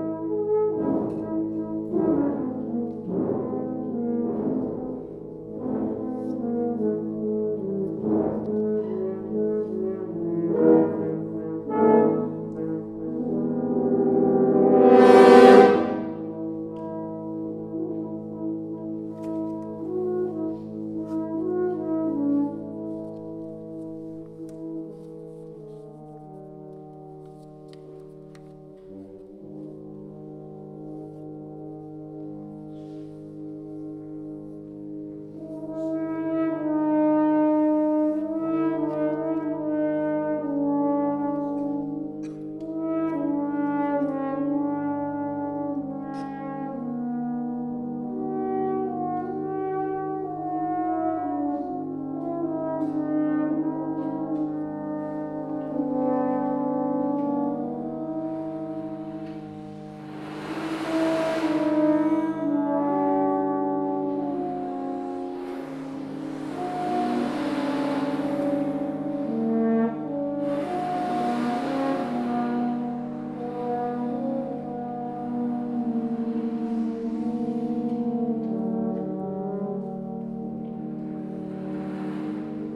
Voxman Music Building, Iowa City, IA, USA - Horn Choir Live Sound Painting by U Iowa Horn Choir and Audience
This is a live composition described as a 'sound painting' performed by a horn class at the University of Iowa. The performance utilized the French Horn and its pieces, the voice, movement, and noises generated by the audience. This was recorded with a Tascam DR MKIII. This was one portion of a concert lasting approximately 1 hour and 15 minutes in total.